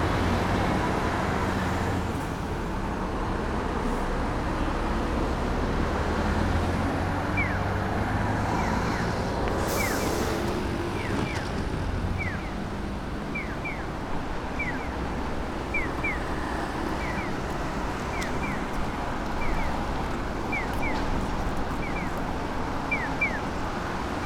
pedestrian crossing, near river, Gojo, Kyoto - crossings sonority
traffic signals, cars, mopeds, people, river ...